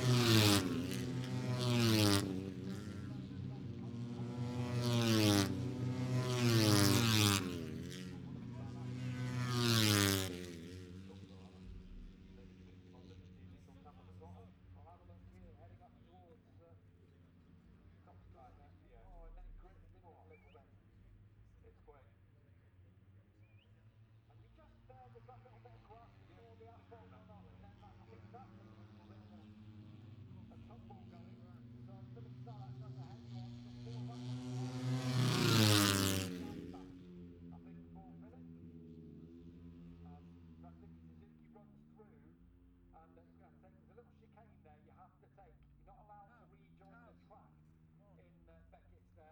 moto three free practice two ... maggotts ... dpa 4060s to Zoom H5 ...